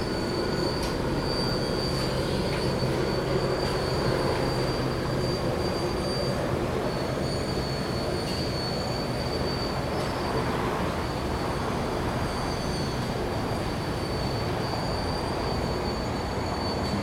West Hollywood, Kalifornien, USA - car wash
santa monica boulevard, west hollywood; car wash, distant traffic, helicopter;